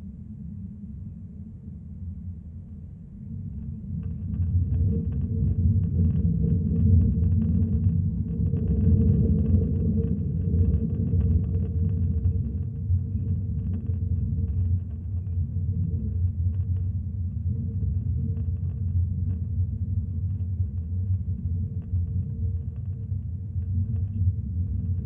some metallic pole with electricity box and wifi antennas. Geophone placed on it.
1 June 2020, ~19:00